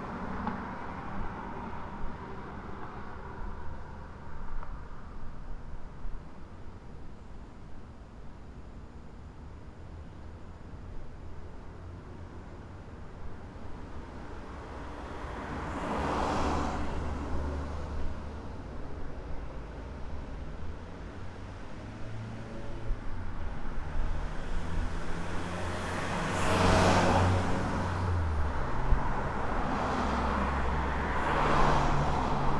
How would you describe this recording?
A recording taken outside of the Academy of Design--an institution concerned with developing visual communication skills that's surrounded by sound.